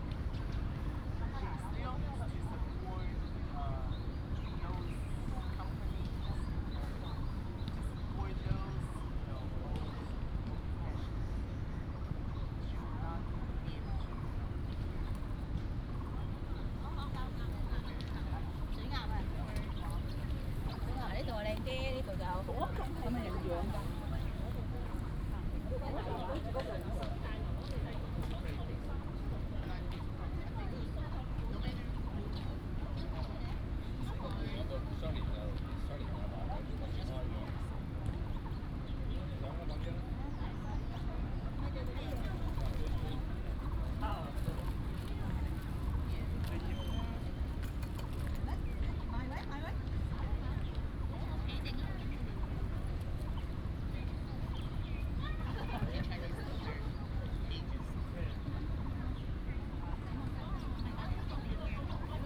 At the university, Bird sounds, Goose calls, pigeon
Taipei City, Taiwan, 4 March, 16:30